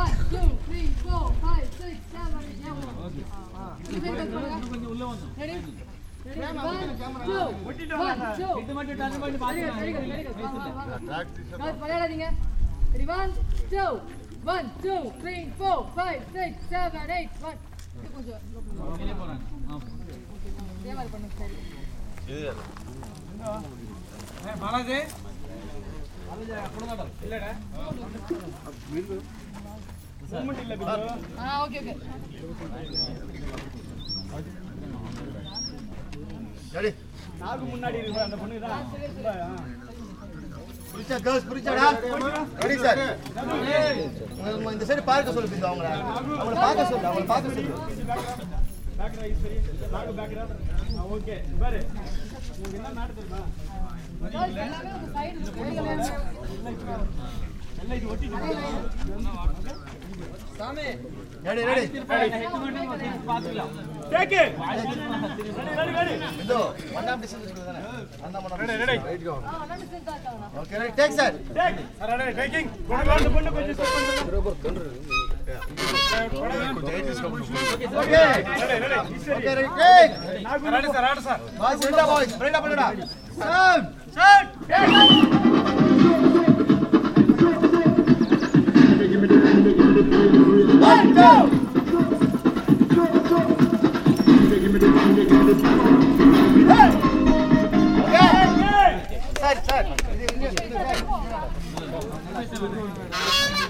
India, Karnataka, Hampi, Kollywood, filming, cinema